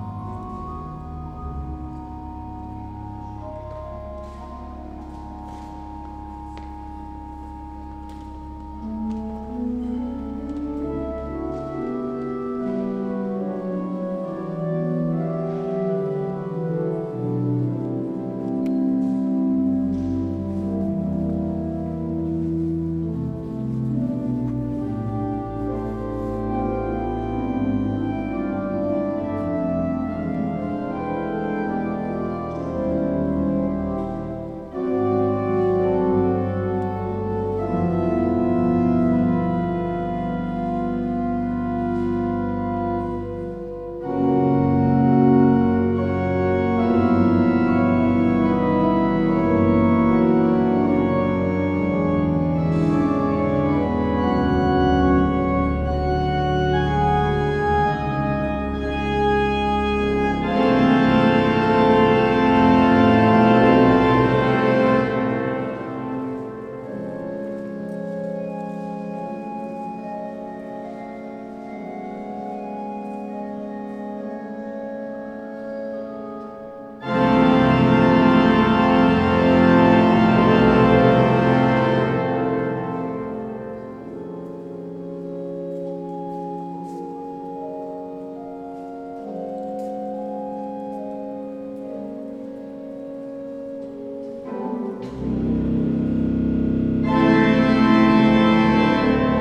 Centrum, Haarlem, Nederland - The Müller Organ
Two recordings made on Sunday July 12th 2015 in the Great Church, or Saint Bavo Church, in Haarlem.
Recorded with a Zoom H2. I could not prepare this recording and create a proper set-up; you might hear some noises in the 2nd piece caused by me moving the mic... but I liked the 2nd piece too much to turn this recording down.